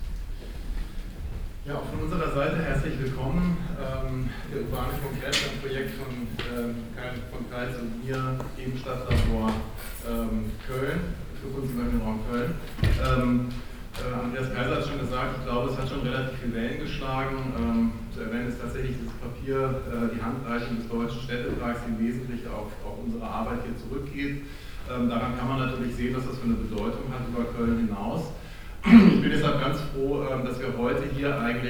Altstadt-Nord, Köln, Deutschland - cologne, filmforum, cinema
Inside the cinema room of the Filmforum at the Museum Ludwig during the public presentation of the "Urbaner Kongress". The sound of an amplified speech here held by Markus Ambacher in the well carpeted and acoustically damped room atmosphere.
soundmap nrw - social ambiences, art places and topographic field recordings
29 June 2013, 15:20